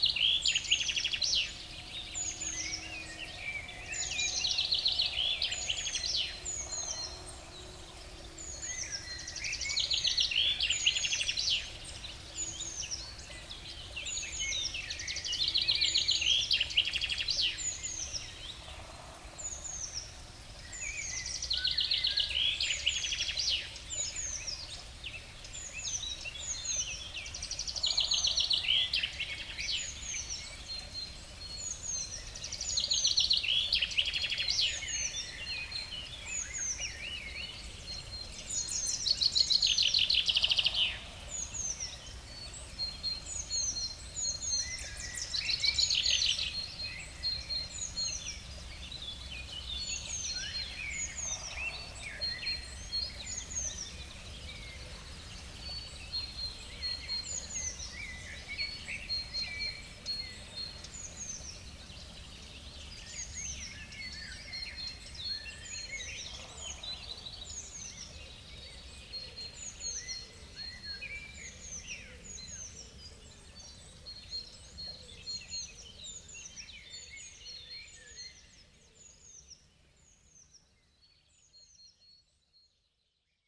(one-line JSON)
{"title": "Stoumont, Belgium - Birds waking up", "date": "2018-04-21 06:00:00", "description": "During my breakfast. A small stream, and birds waking up. A very excited Common Chaffinch singing and fighting !", "latitude": "50.46", "longitude": "5.88", "altitude": "530", "timezone": "Europe/Brussels"}